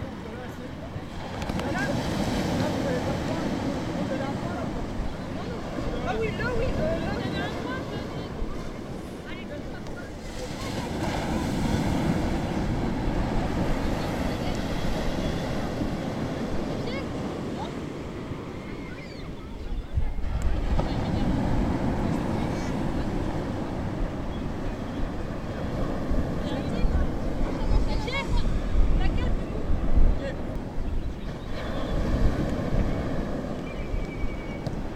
Groix, France - beach sound
people are sunbathing and playing on the beach on the isle of Groix
5 August 2015